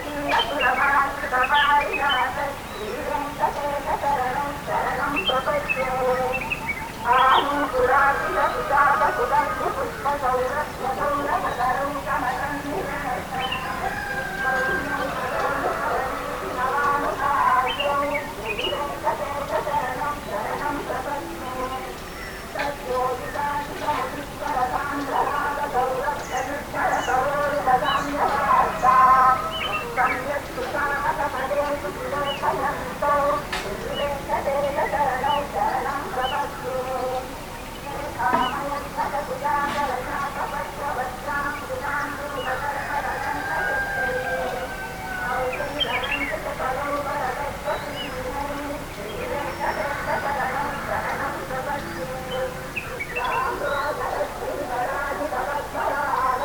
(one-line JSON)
{"title": "Munnar - Udumalpet Rd, Nullatanni, Munnar, Kerala 685612, India - Munnar - above the valley", "date": "2002-01-22 06:00:00", "description": "Munnar - above the valley, early morning", "latitude": "10.09", "longitude": "77.06", "altitude": "1472", "timezone": "Asia/Kolkata"}